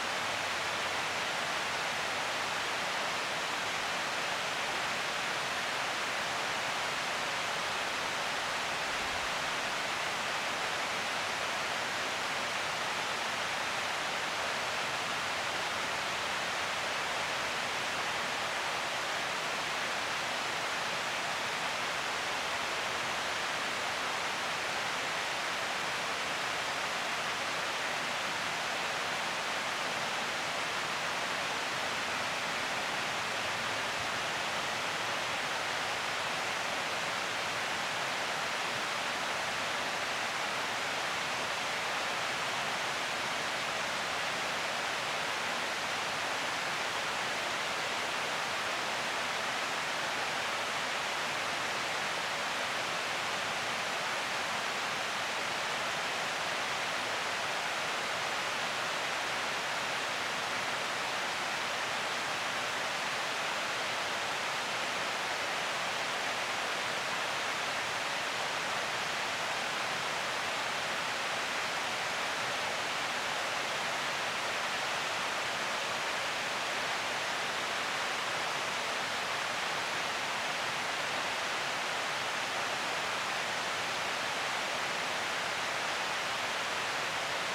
Towson, MD, USA - Over the Water
Boom pole held out over the waterfall of the dam at Lake Rowland.